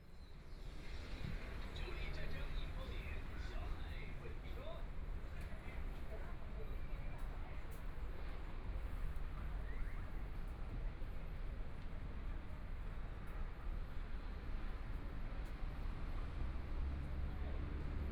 Xinsheng N. Rd., Zhongshan Dist. - in the Street
walking in the Xinsheng N. Rd., Traffic Sound, Construction Sound, Next to the school, Binaural recordings, Zoom H4n+ Soundman OKM II